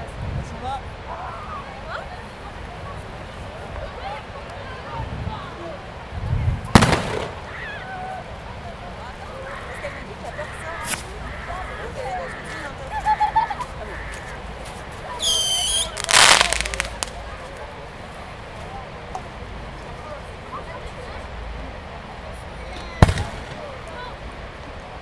Cabourg - Fireworks on the beach